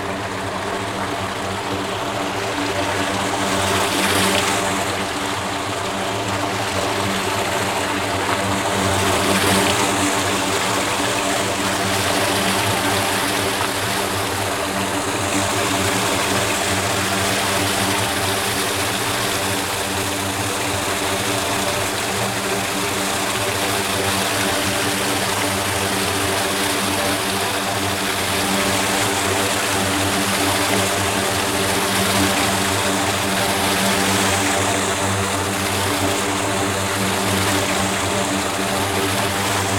Vyskov, Czechia - whirl
whirl from place where the upper lake streams to the lower lake of the sedimentation pool.